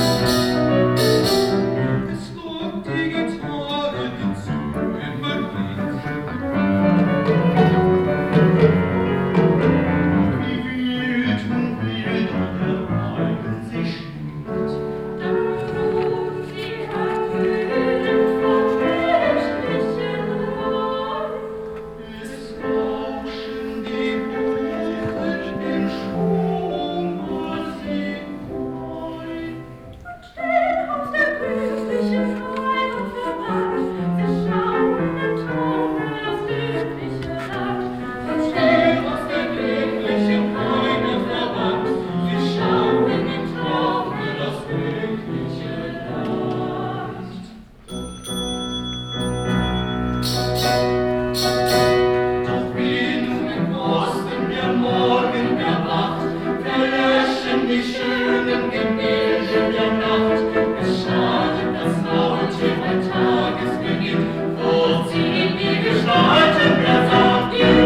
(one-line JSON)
{"title": "Pempelfort, Düsseldorf, Deutschland - Düsseldorf, Clara Schumann Musikschule, preliminary", "date": "2013-01-17 19:45:00", "description": "Inside the Meeteren concert hall at a preliminary of the music school students. The sound of a four people choir accompanied by piano and finished by applause.\nThis recording is part of the intermedia sound art exhibition project - sonic states\nsoundmap nrw - topographic field recordings, social ambiences and art places", "latitude": "51.24", "longitude": "6.79", "altitude": "44", "timezone": "Europe/Berlin"}